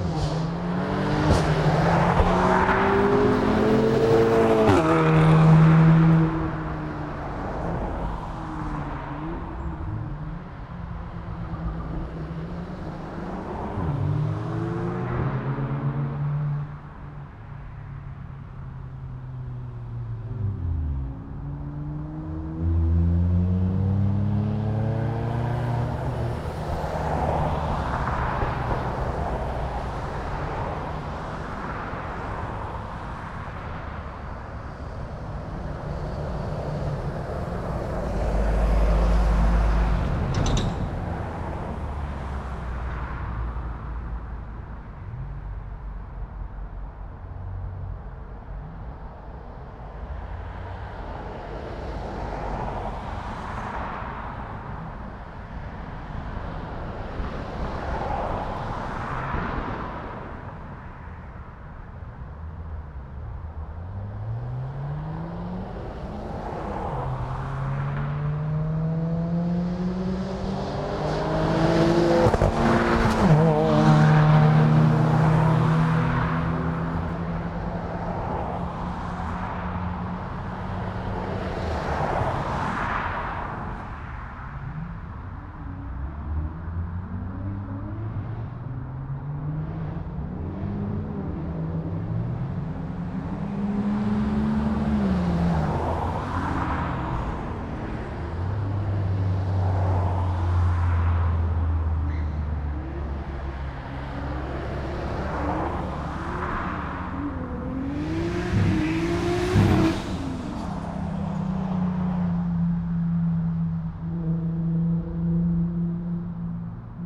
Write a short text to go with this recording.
These boys have been using the roads of Reading for practice during lockdown, often cruising around midnight and 5.30am. The racing went on for a couple of hours with other cars, vans, buses and trucks having to negotiate their way along the 'racetrack'. The exhausts on some cars exploding and back firing like fire crackers. Sony M10 with built-in mics.